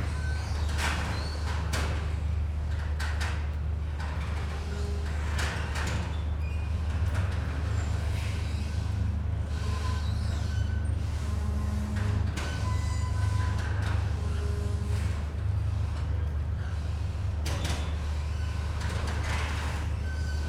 Trieste, Zona Industriale, Italy - boats squeeking at landing stage
boats and gear squeeking and rattling at landing stage
(SD702, AT BP4025)